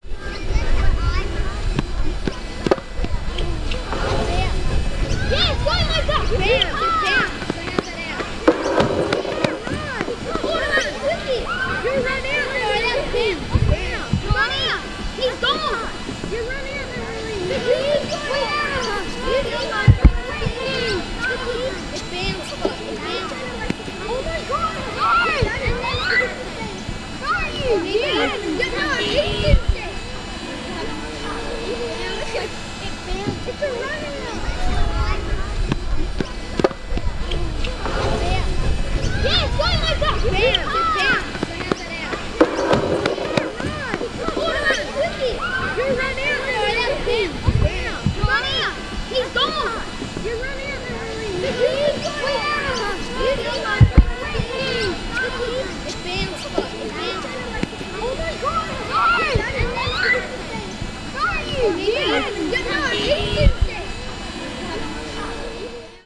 Currumbin Waters, QLD, Australia - Cricket game
Children recording a cricket game during a school holiday club, plus a bit of arguing about the game! A woodchip factory is whirring away in the distance.
Part of an Easter holiday sound workshop run by Gabrielle Fry, teaching children how to use equipment to appreciate and record sounds in familiar surroundings. Recorded using a Rode NTG-2 and Zoom H4N.
This workshop was inspired by the seasonal sound walks project, run by DIVAcontemporary in Dorset, UK.
Currumbin Waters QLD, Australia, 16 April 2015